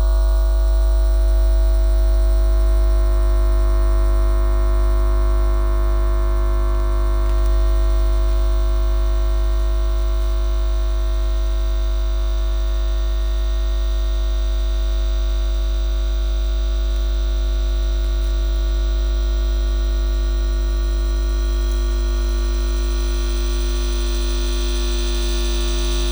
Courcelles, Belgique - Electromagnetic recording - Power station
Electromagnetic recording during a walk below a 70 kv electric line.
Courcelles, Belgium, 3 June 2018